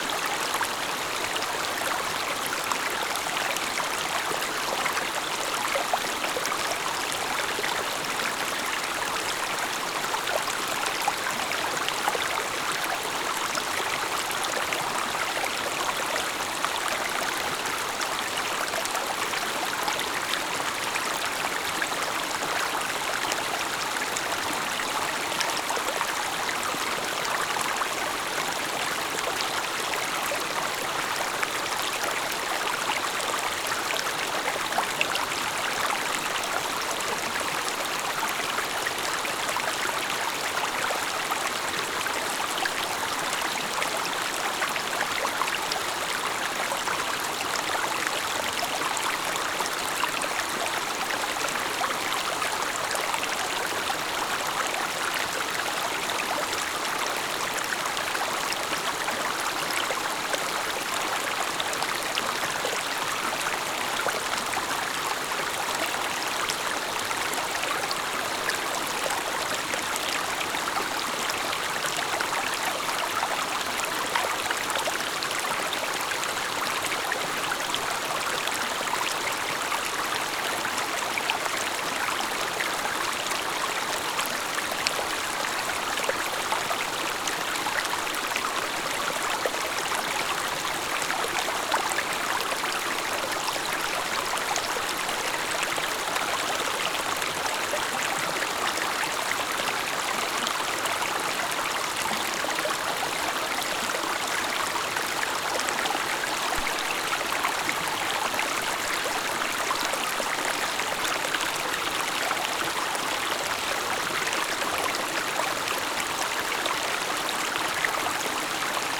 SBG, Gorg Negre - Torrent del Infern
Descenso del torrente en su llegada al Gorg Negre.
Sobremunt, Spain, 20 July